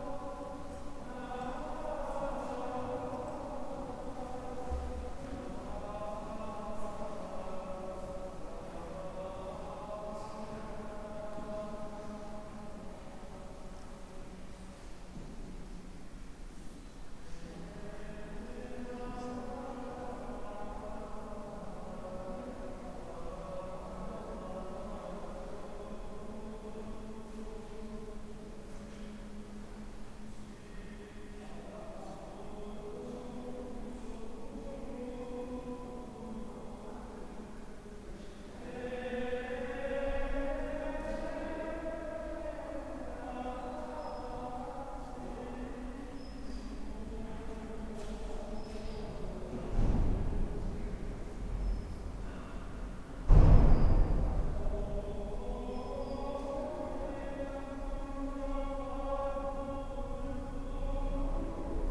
Inside the Church: a chorus, the smashing of the church door, people dropping coins for candles